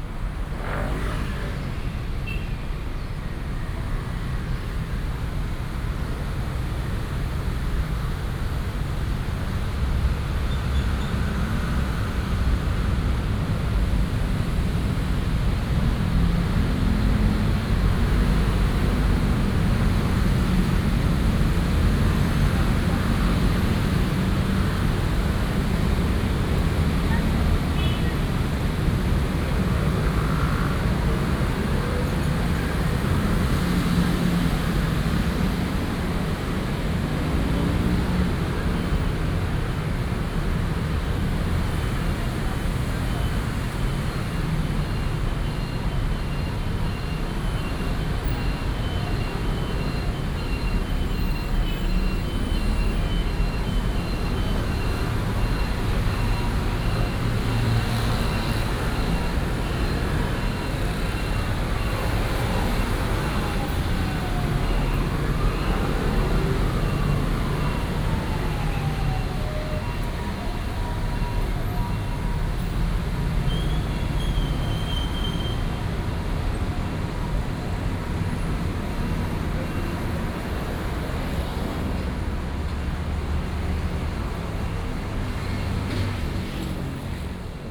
Sec., Keelung Rd., Da’an Dist., Taipei City - Traffic Sound
Traffic Sound, Walking in the street, Working hours, A lot of cars and locomotives
17 July 2015, 08:31